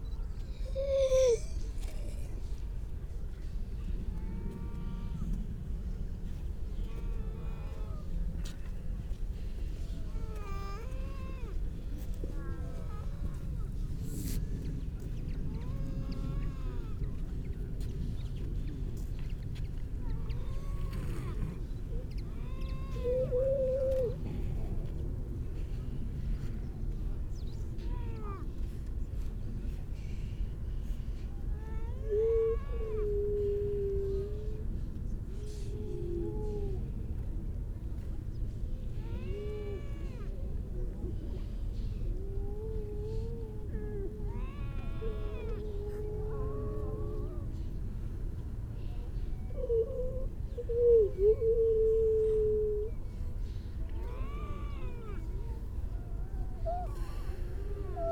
grey seal soundscape ... mainly females and pups ... parabolic ... bird calls ... mipit ... curlew ... crow ... skylark ... pied wagtail ... redshank ... starling ... linnet ... all sorts of background noise ...